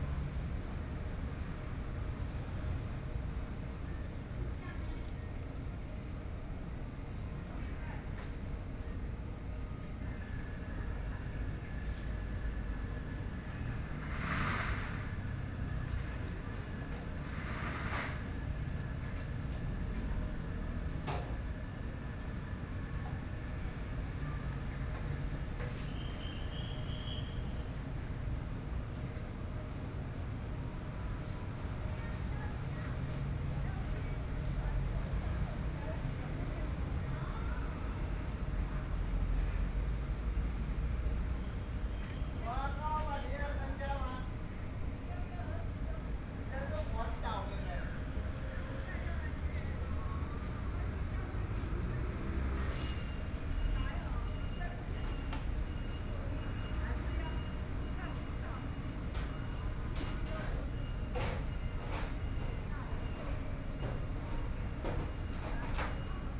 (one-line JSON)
{"title": "Zhongzheng Rd., Hualien City - in the street", "date": "2013-11-05 14:53:00", "description": "Being ready to start business and shopping street cleaning, Binaural recordings, Sony PCM D50 + Soundman OKM II", "latitude": "23.98", "longitude": "121.61", "altitude": "12", "timezone": "Asia/Taipei"}